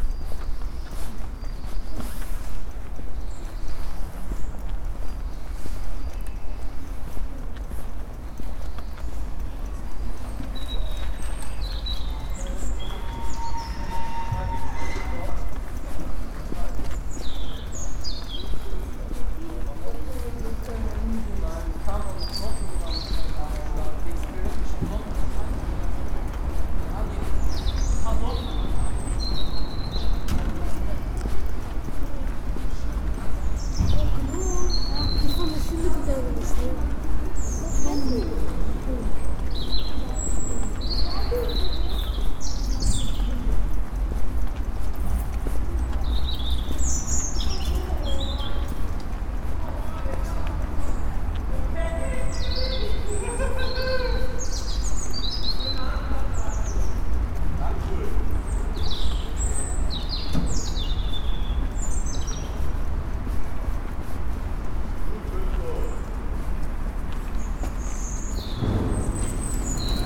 Cologne, Blumenthalstr, Deutschland - Walk at sunrise
Walk at sunrise through Blumenthalstraße. Birds, a pedestrian, a bicycle passing, pupils meeting in front of a school.
Cologne, Germany, 20 January 2014, 08:12